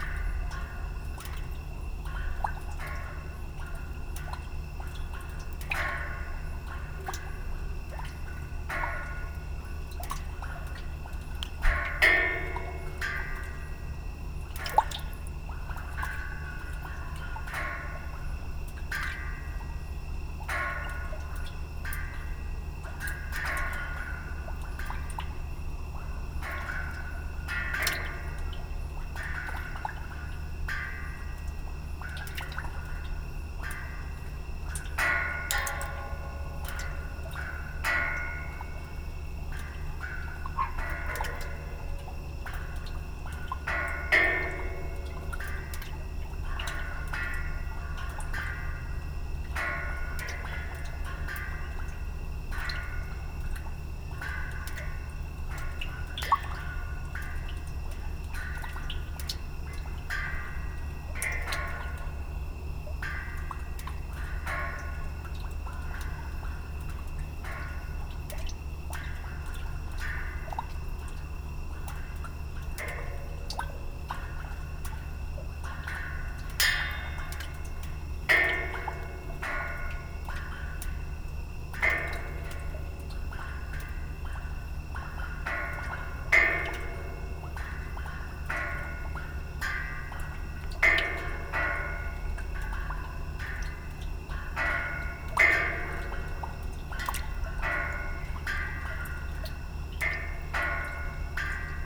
Grill covered drain...light autumn rain...
강원도, 대한민국, October 2021